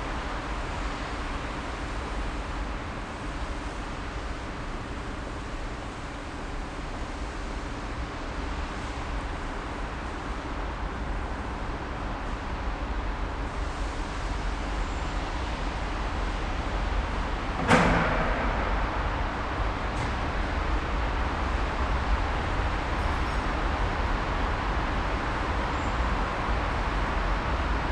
Düsseltal, Düsseldorf, Deutschland - Düsseldorf, ice stadium, empty hall
Inside the old Ice Stadium of Duesseldorf. The hall is empty and you hear the sound of the the street traffic from the nearby street and water streams as some workers clean the walk ways with a hose pipe.
This recording is part of the exhibition project - sonic states
soundmap nrw -topographic field recordings, social ambiences and art places
Düsseldorf, Germany, 11 December 2012